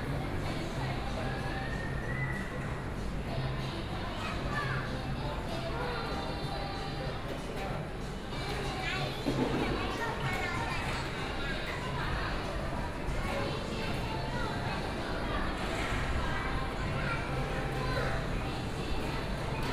Food Street area, Aircraft flying through, Binaural recordings, Sony PCM D50 + Soundman OKM II